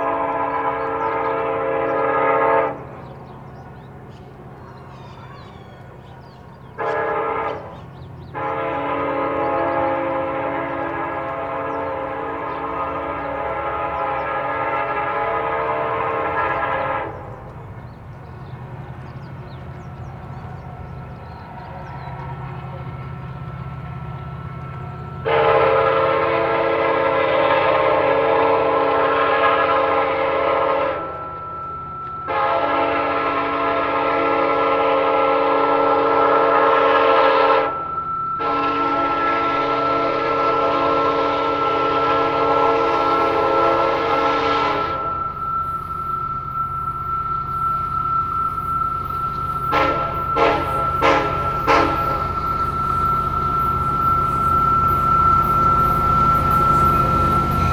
A train is passing by in the small town of Wuadley in the Wirikuta Desert (Mexico, State of San Luis Potosi SLP). Train horn and railway vibration at the beginning (recorded by the contact microphone).
Sound recorded by a MS setup Schoeps mixed with a contact microphone
Microphone CCM41+CCM8
Contact Microphone Aquarian H2aXLR
Sound Devices 744T recorder
MS is encoded in STEREO Left-Right and mixed with the Contact Microphone
recorded in july 2012
Ranchito de Coronados, S.L.P., Mexico